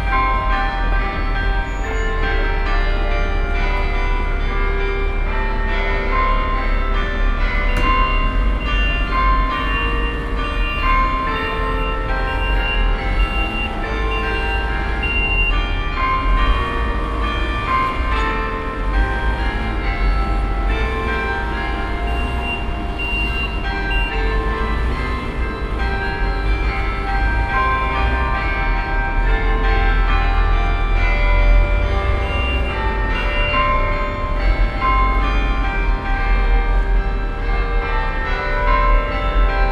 Brussels, Mont des Arts, the chimes / Le Carillon
Brussels, Mont des Arts, the chimes.
Bruxlles, le carillon du Mont des Arts.